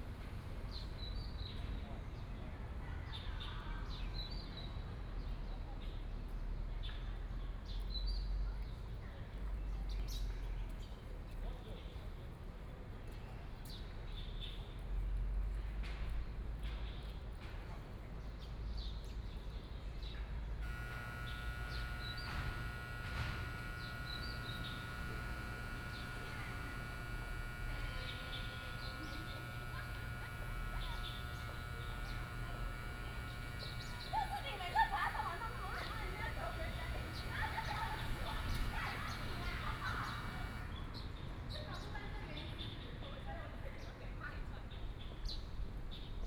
Yuan Ze University, Taoyuan County - Sit in the smoking area
Traffic Sound, Birds singing, Students voice chat, Binaural recording, Zoom H6+ Soundman OKM II
Taoyuan County, Bade City, 元智三館, 9 December 2013, 16:13